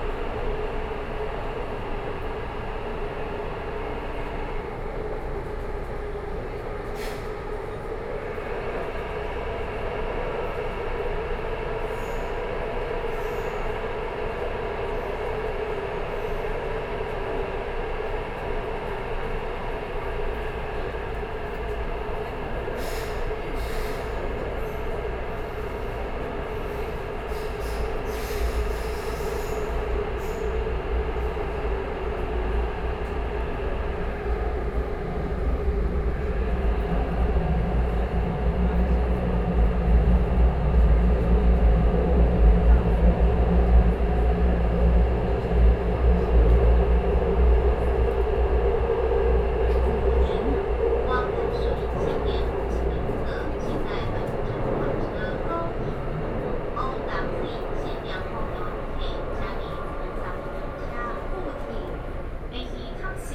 Orange Line (Taipei Metro), Taipei City - inside the Trains
Crossing the line noise sound great, from Zhongxiao Xinsheng Station to Guting Station, Sony PCM D50 + Soundman OKM II